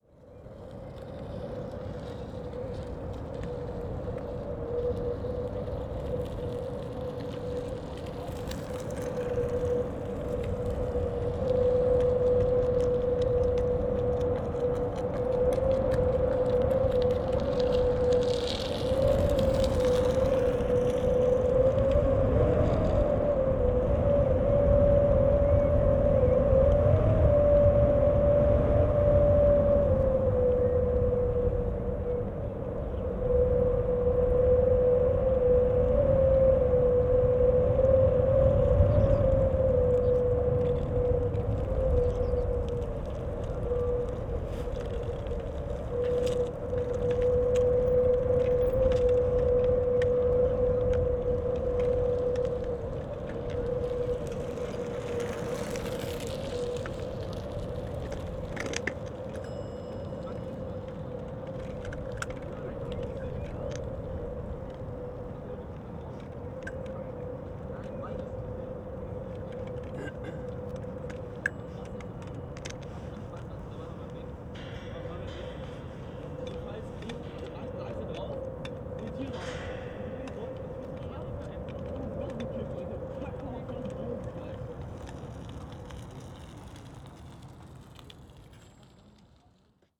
the wind sings in the metal fence, people passing by
(SD702, Audio Technica BP4025)